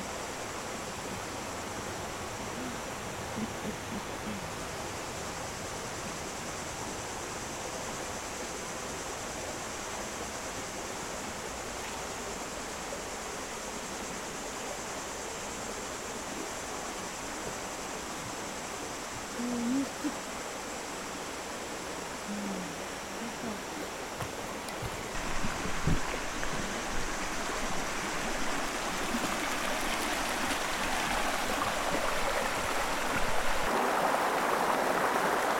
Cognin, France - Le Forezan
Près du nant du Forezan une petite rivière au calme près de la ferme du Forezan à Cognin.